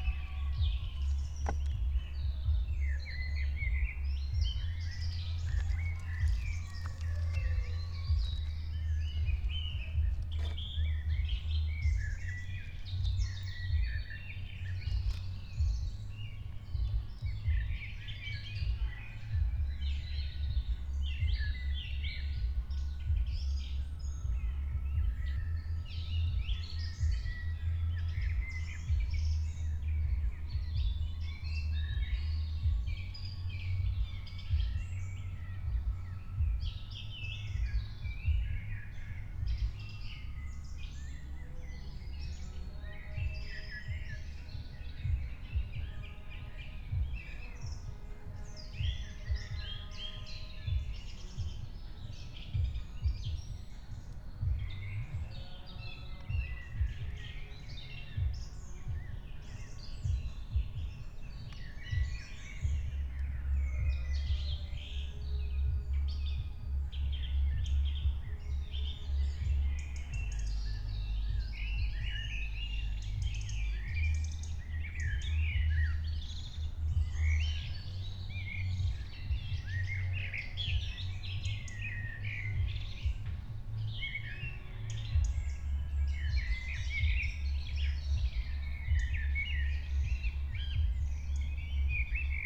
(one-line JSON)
{"title": "Berlin, Königsheide, Teich - pond ambience /w frogs and remains of a rave", "date": "2021-07-04 04:00:00", "description": "04:00 Berlin, Königsheide, Teich - pond ambience. Somewhere nearby a rave happend the night before, still music and people around.", "latitude": "52.45", "longitude": "13.49", "altitude": "38", "timezone": "Europe/Berlin"}